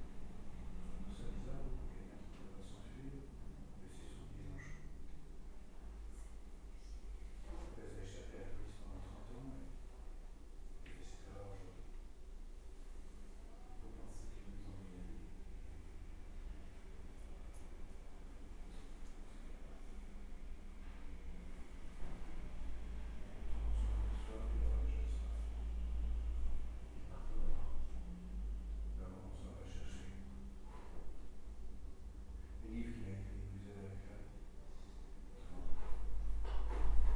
Film production, in an old school transformed in a police station. The film is called "La Forêt" and it's a 6 times 52 mn (Nexus Production). The recording contains timeouts, and three shootings (3:12 mn, 10:49 mn, 14:37). It's a dumb sequence when a murderer is waiting to be interrogated. Thanks to the prod welcoming me on the filmmaking.